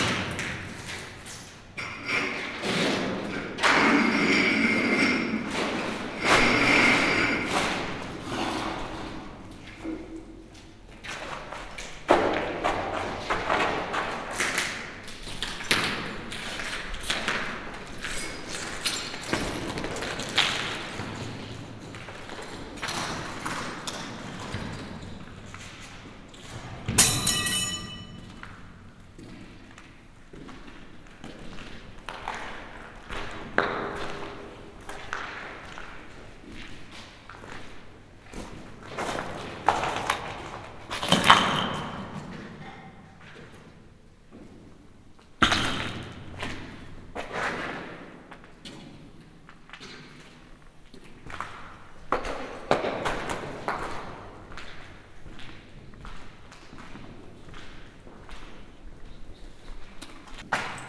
ruin of german ammunition factory in Ludwikowice Klodzkie, Poland
inside a bunker, jamming with the materials on the ground
17 October